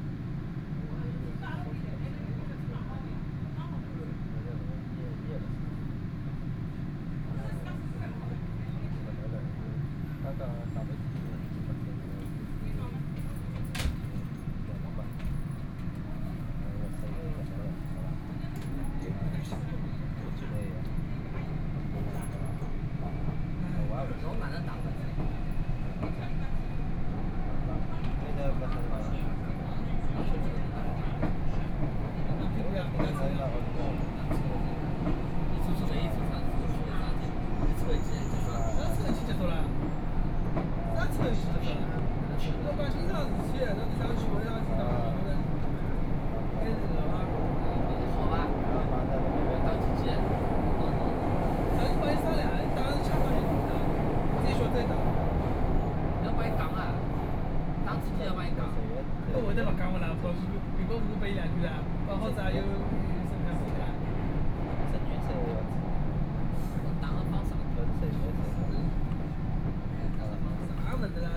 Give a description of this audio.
from Peope's Square station to ufu Road Road station, erhu, Binaural recording, Zoom H6+ Soundman OKM II